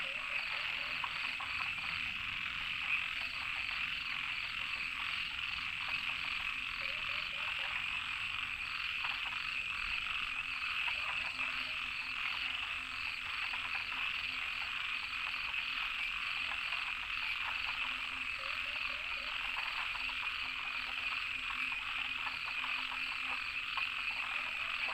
Frogs chirping, Ecological pool, Various frogs chirping, Goose calls